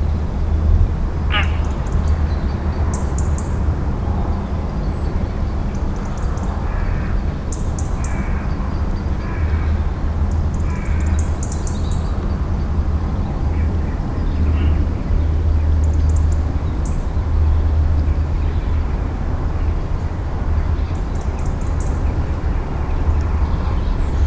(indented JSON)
{
  "title": "Oostduinkerke, DahliaLaan",
  "date": "2011-11-12 07:37:00",
  "description": "Early morning, few birds passing by.",
  "latitude": "51.13",
  "longitude": "2.68",
  "altitude": "6",
  "timezone": "Europe/Brussels"
}